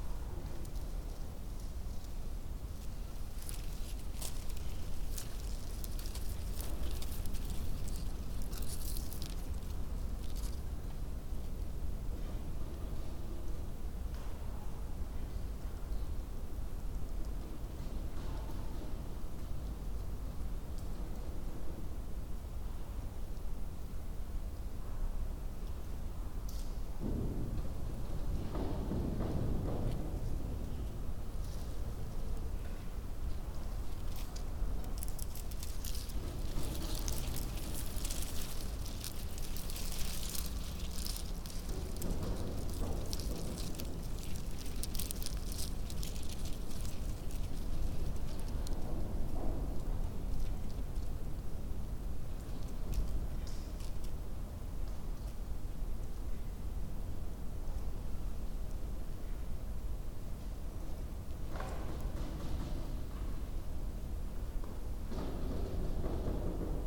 Brussels, Belgium - Discarded VHS tape fluttering in the wind
This old factory is now completely full of discarded junk, including quite a lot of VHS tape, which has in time unwound itself from its containment, and dissipated throughout the building in long, fluttery drifts. Because so much of the glass from the windows is missing inside the derelict building, the wind rips right through the space, shaking all the things in its path; loose panes of glass, heavy doors that hang in their frames, and the VHS tape that has been left lying around.
21 June 2013, 14:30